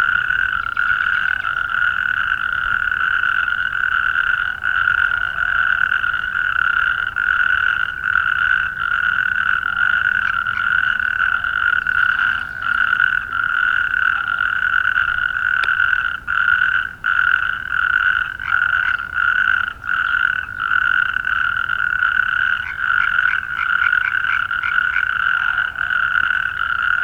Frogs near Babenhausen, Deutschland - Noisy Frogs in a spring evening in a big puddle
Recorded with a Zoom H2n during a stroll trough the fields and woods near Babenhausen - a sunny Saturday evening after a cold and rainy day. Next to the town so much nature - so amazing, we love this place!